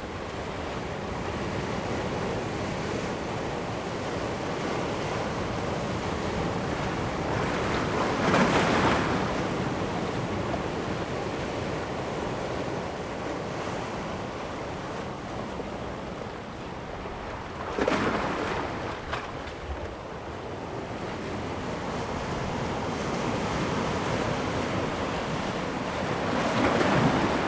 Chenggong Township, Taiwan - the waves and Rock
Sound of the waves, on the rocky shore, Very hot weather
Zoom H2n MS+ XY